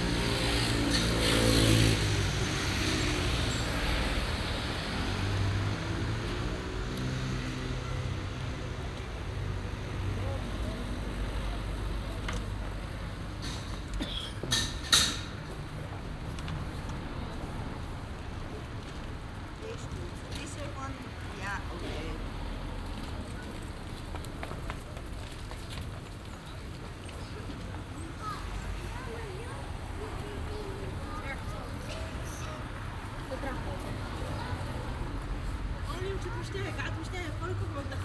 Binaural recording on a busy Saturday afternoon.
Zoom H2 with Sound Professionals SP-TFB-2 binaural microphones.
Den Haag, Netherlands